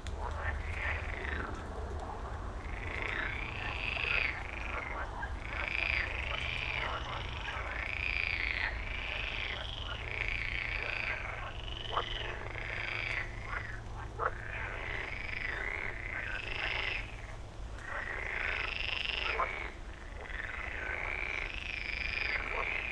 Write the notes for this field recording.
frog concert at Moorlinse pond, noise of nearby Autobahn, a bit of rain and wind, an aircraft descending to Tegel airport, frogs compete in volume, than fade out, (SD702, Audio Technica BP4025)